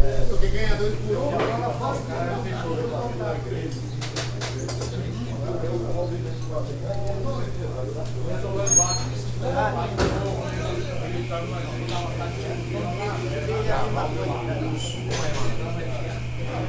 R. Frei Caneca - Consolação, São Paulo - SP, 01307-003, Brasil - Padaria na Rua Frei Caneca
#soundscape #paisagemsonora #padaria #bakery #saopaulo #sp #brazil #brasil
São Paulo - SP, Brazil, 5 September, ~17:00